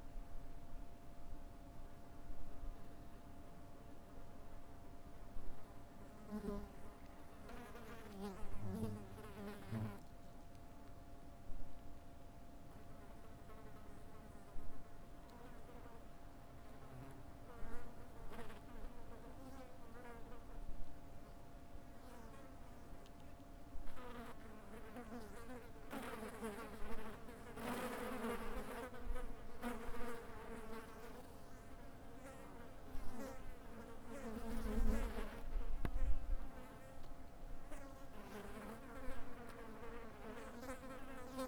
neoscenes: flies on a dead rattlesnake